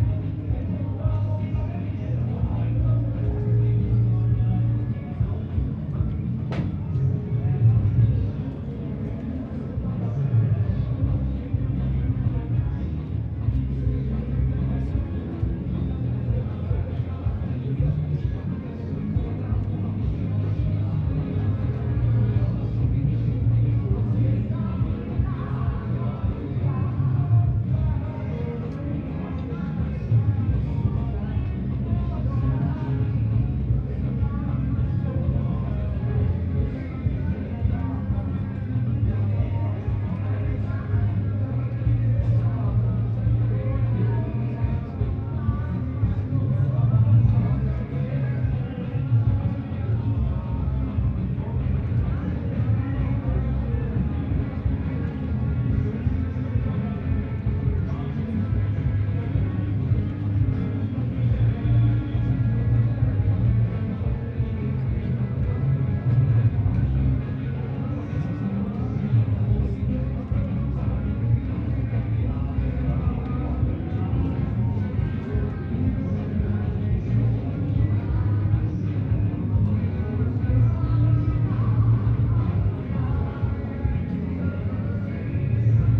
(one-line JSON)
{"title": "Marina Göcek, Turkey - 918a multiple parties in marina", "date": "2022-09-23 00:15:00", "description": "Recording of multiple parties in the marina after midnight.\nAB stereo recording (17cm) made with Sennheiser MKH 8020 on Sound Devices MixPre-6 II.", "latitude": "36.75", "longitude": "28.94", "timezone": "Europe/Istanbul"}